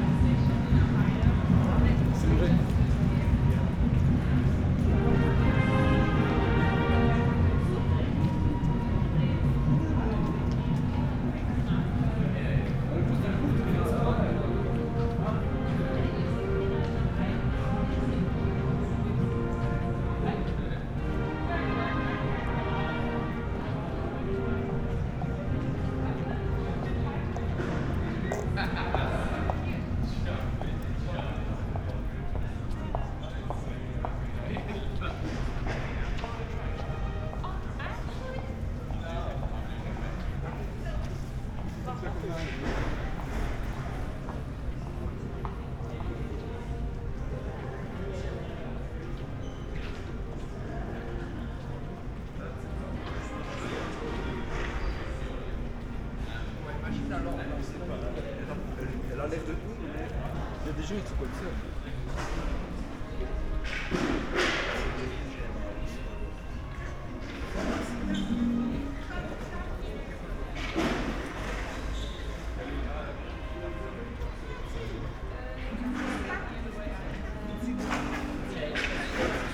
Place des Armes, Luxemburg - orchestra playing
walking away from Places des Armes, to escape The Sound of Silence...
(Olympus LS5, Primo EM172)
Luxemburg City, Luxembourg, 5 July